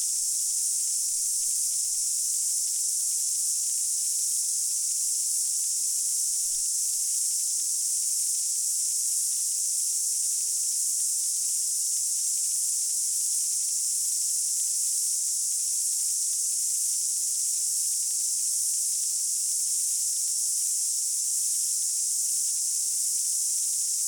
Te Mata, Waikato, New Zealand - Cicadas in the Coromandel Forest Park
Surrounded by cicadas between Te Mata and the Coromandel Forest Park.
Recorded in stereo with two LOM Usi Pro.